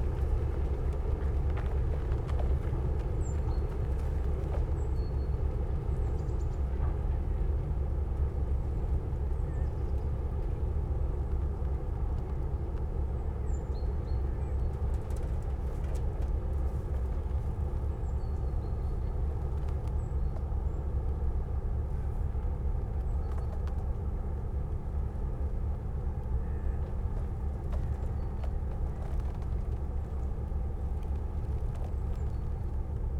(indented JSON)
{
  "title": "berlin, plänterwald: spreeufer, steg - coal freighter passing",
  "date": "2014-01-26 16:20:00",
  "description": "a coal freighter is arriving fron teh south-east germn lignite region. the ice on river Spree isn't yet thick enough for requiring an icebreaker, so these transporters open up the waterway by themself.\n(SONY PCM D50, DPA4060)",
  "latitude": "52.47",
  "longitude": "13.49",
  "altitude": "31",
  "timezone": "Europe/Berlin"
}